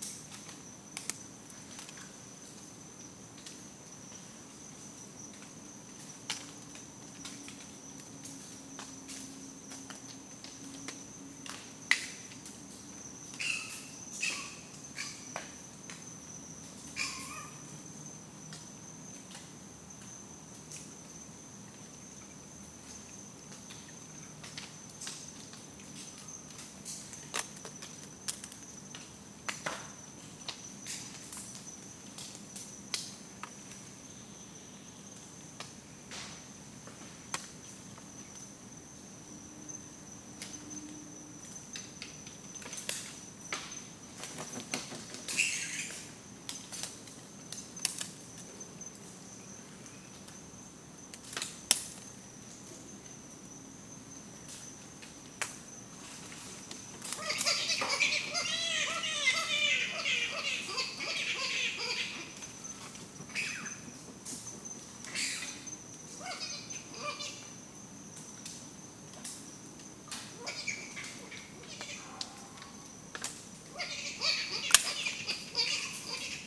Cremorne, NSW, Australia - Hunt's Lookout Bats at Midnight
Bats making a racket in a small reserve in a residential area of Cremorne..the percussive sounds are their droppings/fruit etc falling to the ground from the treetops... DPA 4060 pair into Earthling Designs (custom) preamps, Zoom H6.. slight EQ roll off to remove some city rumble
Cremorne Point NSW, Australia, January 2017